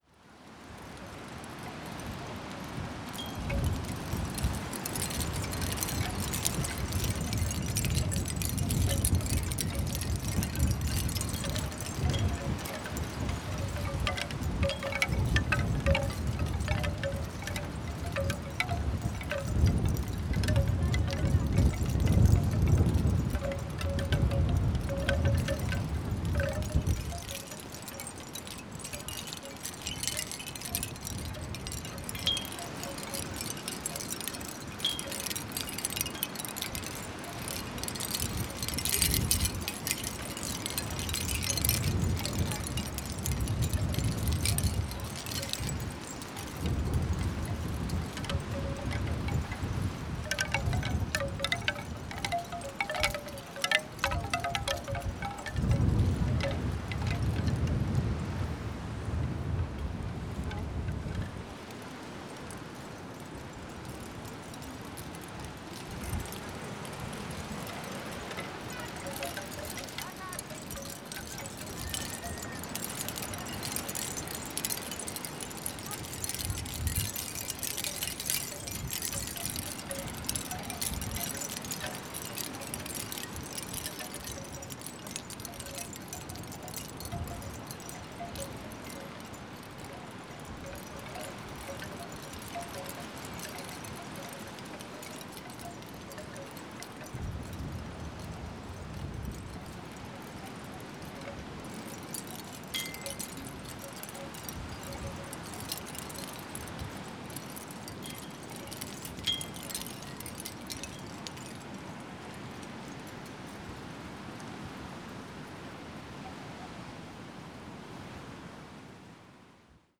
a stall with shoddy souvenirs set up on a beach. wooden and shell wind bells winging in the strong wind.